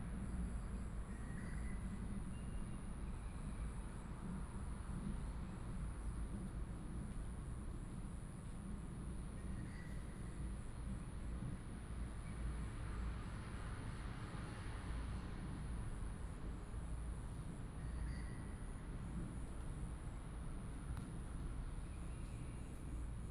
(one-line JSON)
{"title": "光復公園, Hsinchu City - Birds call", "date": "2017-09-21 05:49:00", "description": "in the park, Birds call, Binaural recordings, Sony PCM D100+ Soundman OKM II", "latitude": "24.80", "longitude": "120.99", "altitude": "32", "timezone": "Asia/Taipei"}